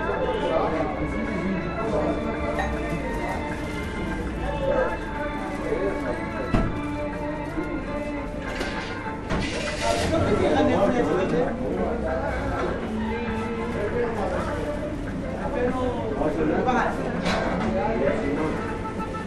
:jaramanah: :iraqi falafel joint: - twentytwo
Syria, 14 October 2008